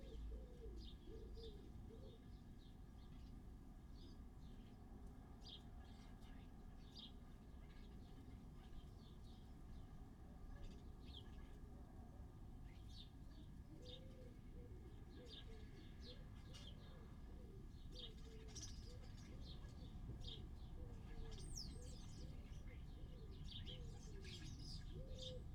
Luttons, UK - distant combine harvesters ... distant thunderstorm ...
distant combine harvesters ... distant thunderstorm ... lavalier mics in a half filled mop bucket ... bird calls from ... house sparrow ... collared dove ... flock of starlings arrive in an adjacent hedge at the end ...